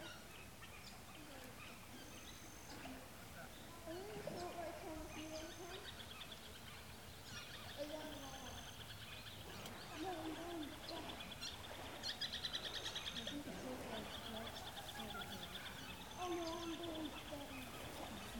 Tourists take a dip at the water hole - Recorded with a pair of DPA 4060s, Earthling Designs PSMP-1 custom preamps and an H4n.